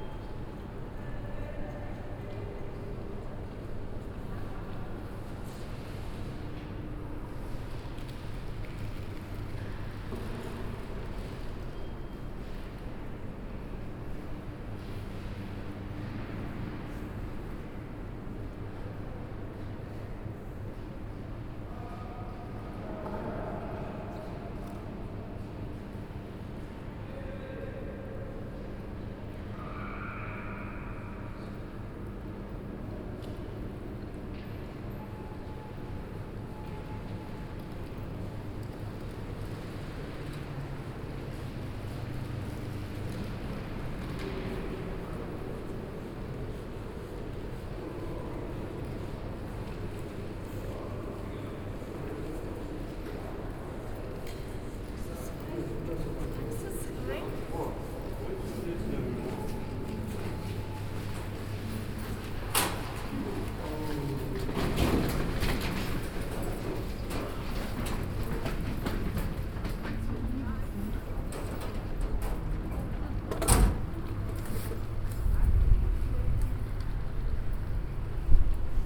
walking from outside West entrance across the station, lingering a bit in the empty hall, walking out front entrance…